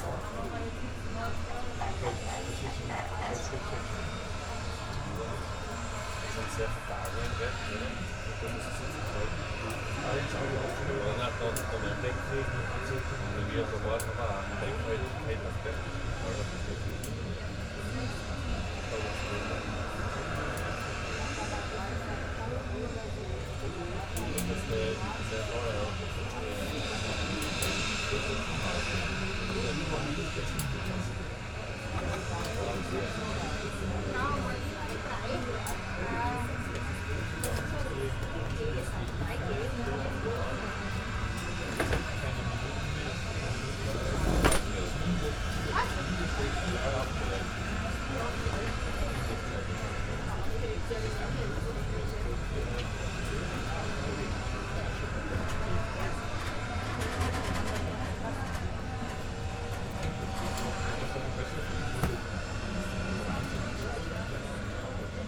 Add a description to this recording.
airport sounds on a windy restaurant terrace.